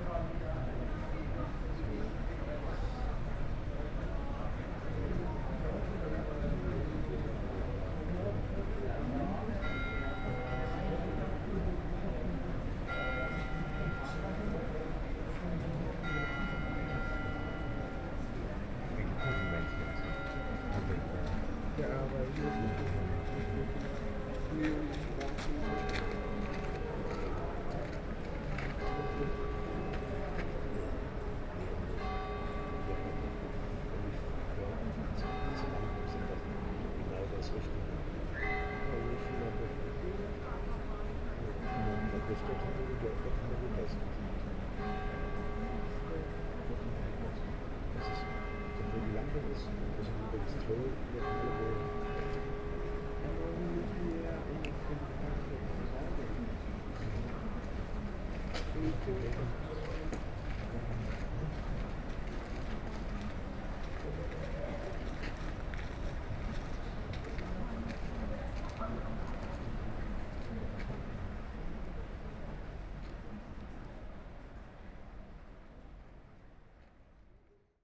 Seminární zahrada, Horní, Horní Brána, Český Krumlov, Czechia - Night Bells in Český Krumlov
Recording of bells from observation platfrom/view point Seminární zahrada.